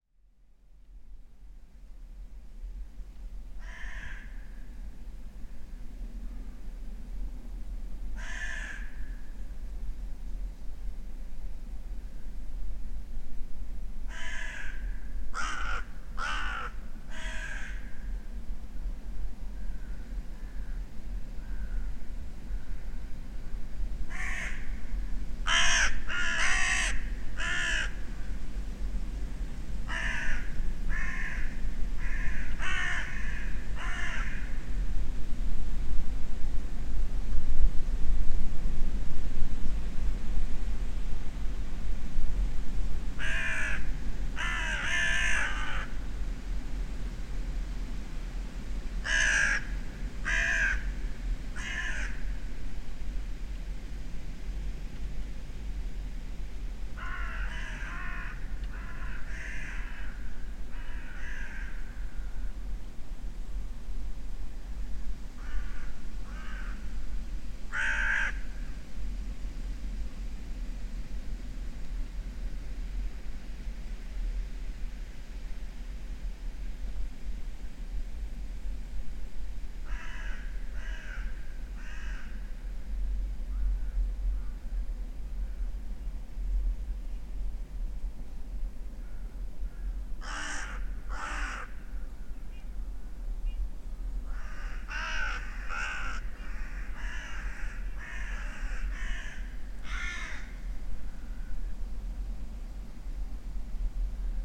Pakalniai, Lithuania, in old oaks hollow
Old oak with burnt out hollow. I placed small microphones in the hollow to listen...crows flying overhead
Utenos apskritis, Lietuva, 2 November 2019, 15:30